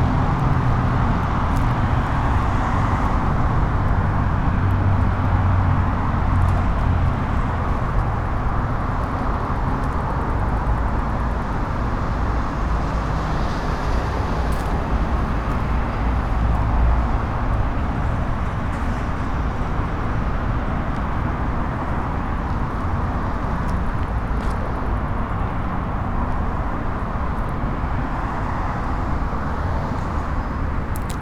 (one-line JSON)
{"title": "Berlioz, León Moderno, León, Gto., Mexico - Caminando por el Parque de la Mona.", "date": "2021-12-13 18:44:00", "description": "Walking by Parque de la Mona.\nI made this recording on december 13th, 2021, at 6:44 p.m.\nI used a Tascam DR-05X with its built-in microphones and a Tascam WS-11 windshield.\nOriginal Recording:\nType: Stereo\nEsta grabación la hice el 13 de diciembre de 2021 a las 18:44 horas.", "latitude": "21.11", "longitude": "-101.67", "altitude": "1801", "timezone": "America/Mexico_City"}